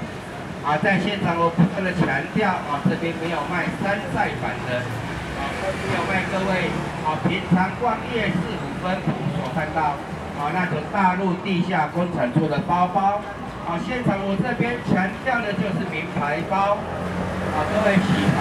Trafficking package, Sony ECM-MS907, Sony Hi-MD MZ-RH1
New Taipei City, Taiwan